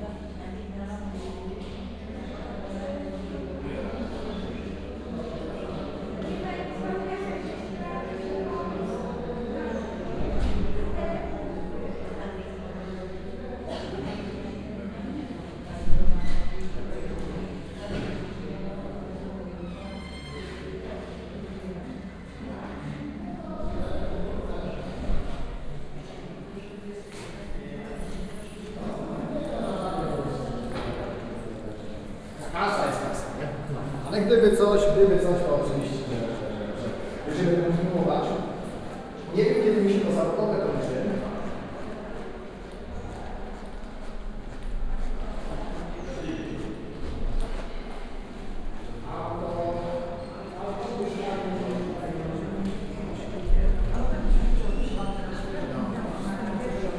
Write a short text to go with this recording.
(binaural recording) recorded inside a clinic. patients talking with each other, phone ringing at the reception. crying children as there is a separate department for treating their diseases. doctors leaving their offices, slamming and locking the doors. it's a big empty space with a few benches, thus the specious reverberation. (roland r-07 + luhd PM-01 bins)